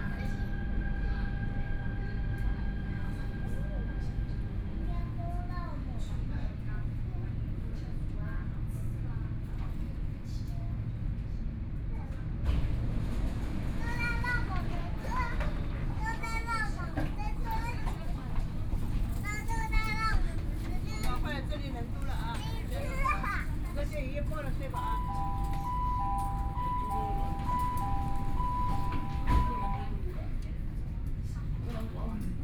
Yangpu District, Shanghai - The elderly and children
The elderly and children, from Xinjiangwancheng station to Wujiaochang station, Binaural recording, Zoom H6+ Soundman OKM II